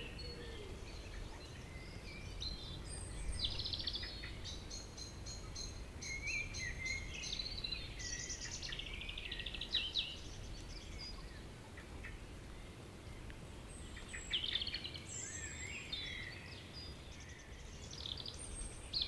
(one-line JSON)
{"title": "Tregastel bourg, Pleumeur-Bodou, France - Evening village birds [Tregastel]", "date": "2019-04-22 20:25:00", "description": "Vers 20hr. Temps humide. présences de volatiles qui font des bruits.\nAround 8 pm. Humid weather. birds sings.\nApril 2019.", "latitude": "48.81", "longitude": "-3.50", "altitude": "31", "timezone": "Europe/Paris"}